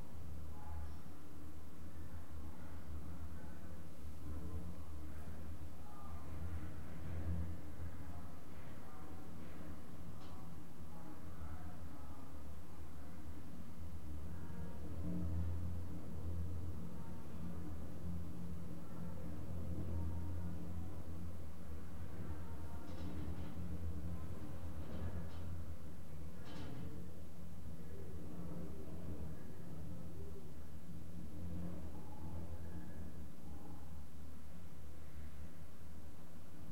R. Conde da Praia da Vitória, Angra do Heroísmo, Portugal - Seismological station
Seismological station, the sound of the wind on the terrace of the building activating the vibration of a metal surface, and some pidgeons.